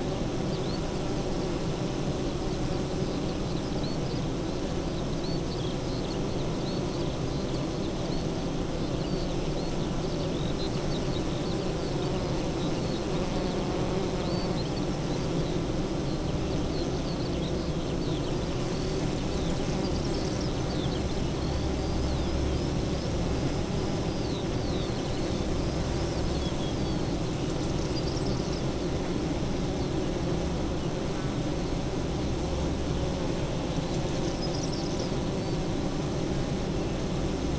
Green Ln, Malton, UK - bee hives
bee hives ... Zoom F6 to SASS ... eight hives in pairs ... SASS on ground facing a pair ... bird song ... skylark ...
Yorkshire and the Humber, England, United Kingdom